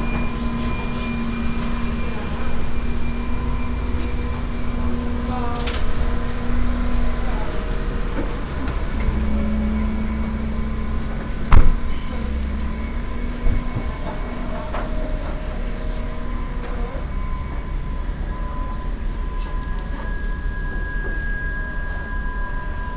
cologne, mülheim, berliner str, am marktplatz
morgens am durchgang vom marktplatz zu angrenzender starssenbahnlinie, das singen einer kreissage überliegt in intervallen dem allgemeinen treiben.
soundmap nrw: social ambiences/ listen to the people - in & outdoor nearfield recordings
31 December 2008, 22:27